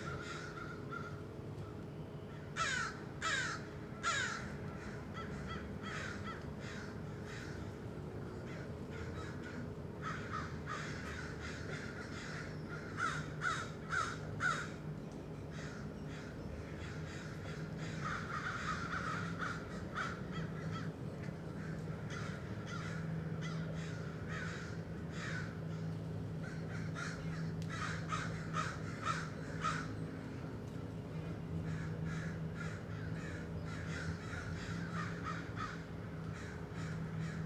every single crow from hood did gather on walnut tree next to the house while I was making tee and listening K.Haino
CA, USA, 11 October, ~03:00